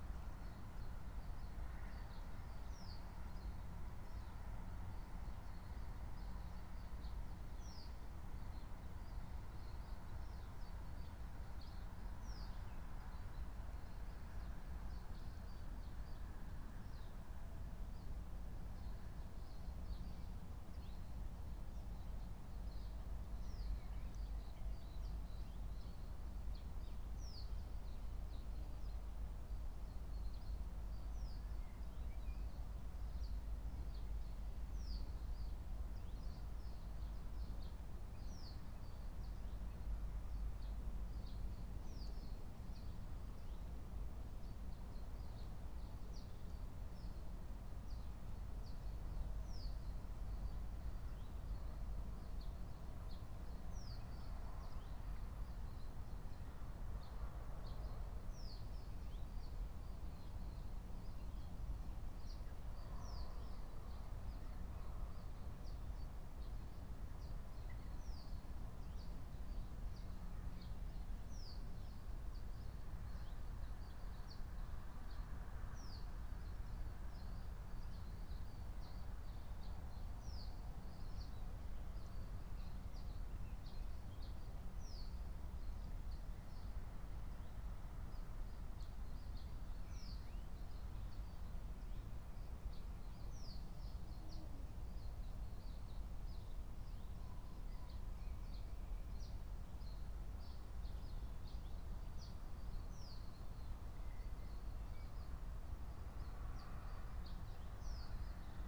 05:00 Berlin Buch, Lietzengraben - wetland ambience
Deutschland